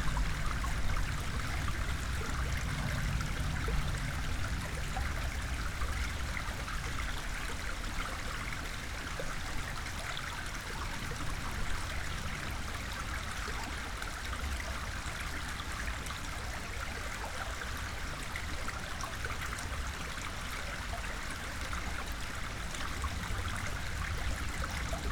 Pakruojis, Lithuania, at the old watermill
waterflow at the old watermill and a plane above